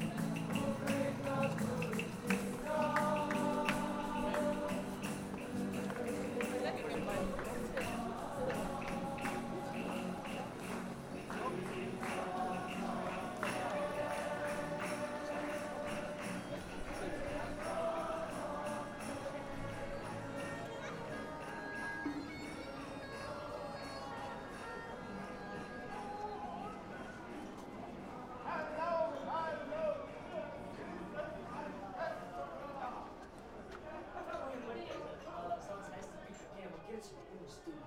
Tallinn, Estonia - EU Capital Of Culture 2011